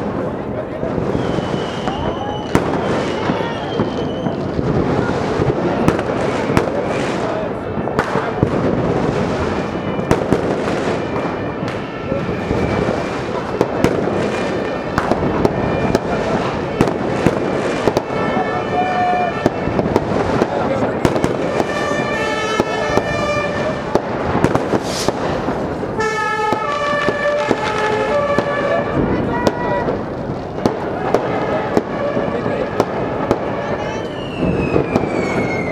Berlin: Vermessungspunkt Friedelstraße / Maybachufer - Klangvermessung Kreuzkölln ::: 01.01.2013 ::: 00:44